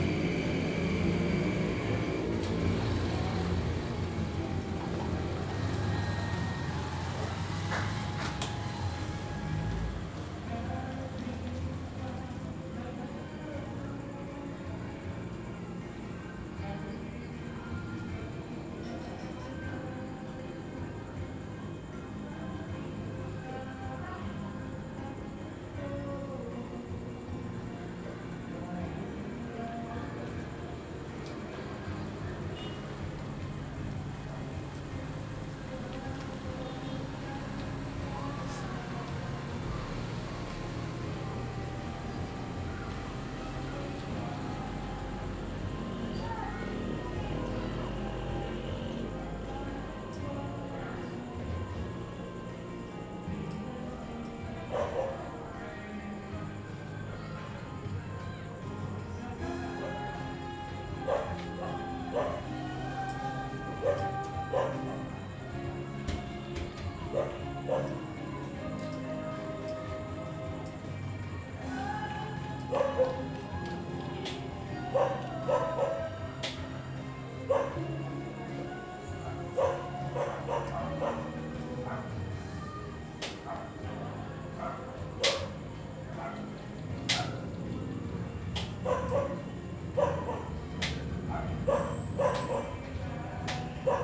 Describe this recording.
There is a home-karaoke party in the neighbourhood. People pass by by walking, in tricycles and in cars by the balcony from where I captured these sounds on a sunday evening. WLD 2016